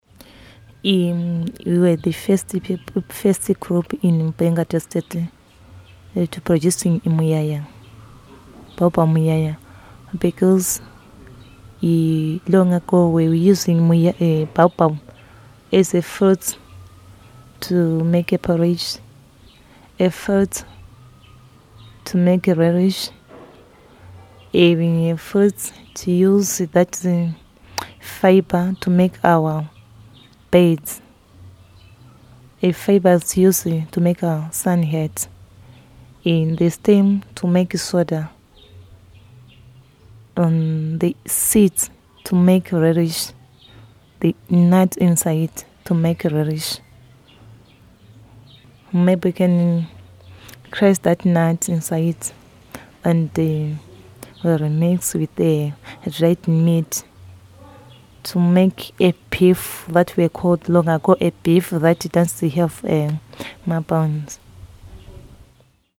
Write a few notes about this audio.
Margaret describes the many different traditional uses of Baobab